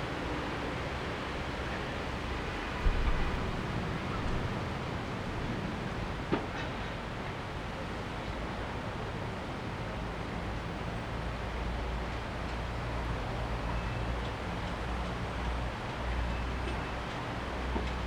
Koryo Hotel, Changgwang St, Pyongyang, Nordkorea - NK-Pyongyang StreetRainMelody
Pyongyang, Koryo Hotel, street with coming rain and melody from loudspeakers; recording out of a window in the 31st floor of Koryo hotel